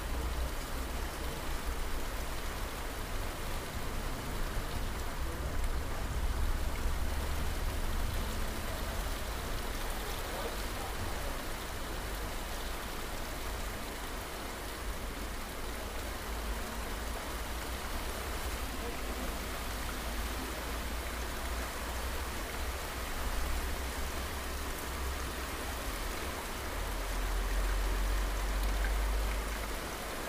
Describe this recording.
kassel, fensterzumhof, sep 4th, 2009